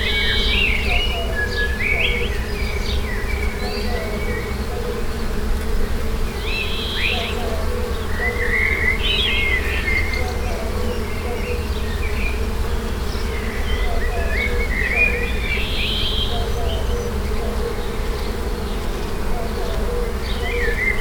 Yzeure, Rue des Trois Pressoirs, Bees and birds
France, Auvergne, Yzeure, Bees, birds, binaural
Yzeure, France, 21 May, 13:44